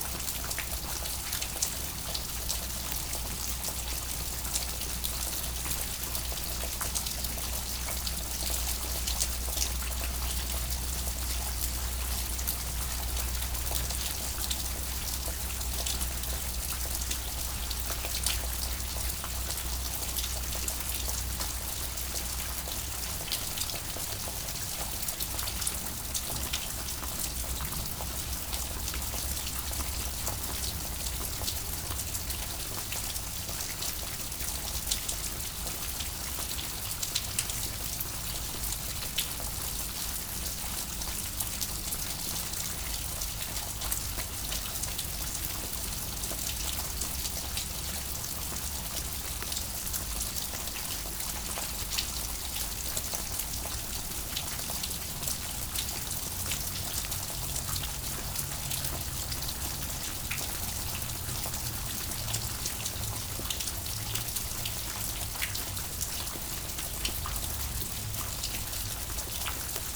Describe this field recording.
A stream is falling from the mountain ; in the entrance of a underground slate quarry, it makes a sound like a constant rain. It's a sunny weather but it's raining everytime here.